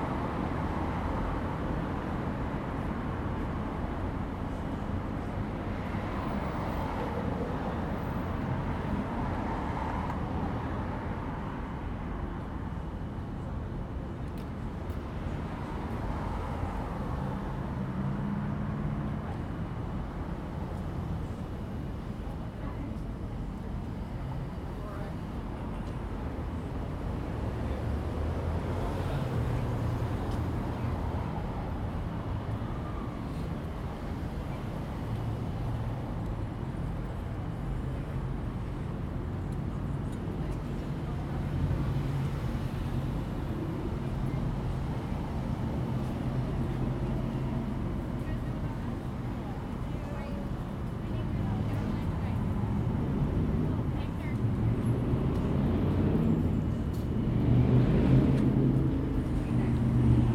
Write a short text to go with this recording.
Santa Monica Boulevard, West Hollywood, Street Cafe around noon; Zoom Recorder H2N